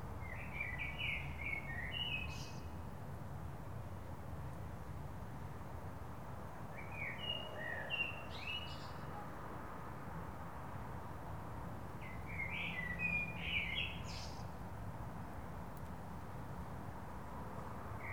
Montreuil, France - Backyard, Montreuil
The end of a quiet summer afternoon.
Sounds of birds, insects, wind and the background noises from the road.
Zoom H4n